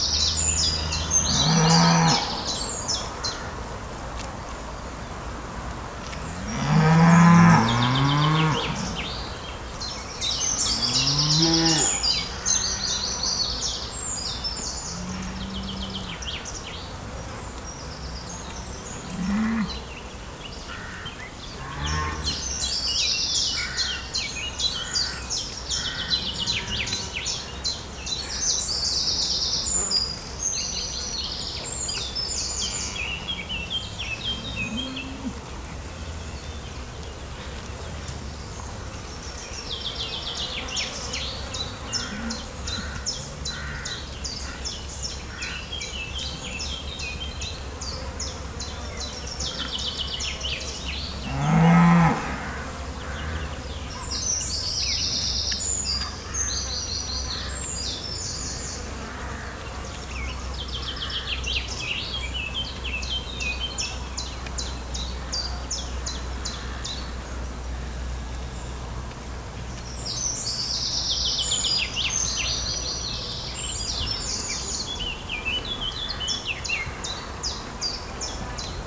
Angry cows in a pasture. Cows are disgruntled because there's a lot of veals and we are very near.
23 July, 08:10